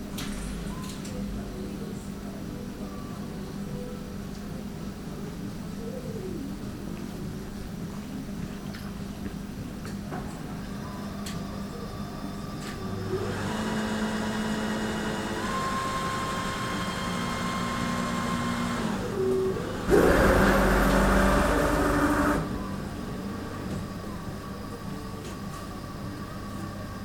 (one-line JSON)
{"title": "Weston Park Hospital, Sheffield UK - Radiotherapy session", "date": "2003-05-14 16:34:00", "description": "Radiotherapy session. Machinery and lasers.", "latitude": "53.38", "longitude": "-1.49", "timezone": "Europe/London"}